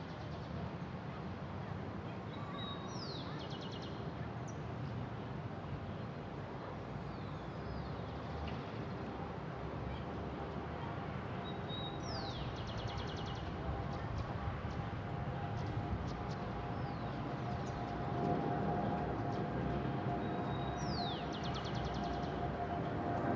Cl., Suba, Bogotá, Colombia - Library Julio Mario Santo Domingo
Julio Mario Santo Domingo Library. Birds, wind, very little traffic of cars, buses, music in the distance, voices and footsteps on concrete and grass of people and a plane flying over at the end.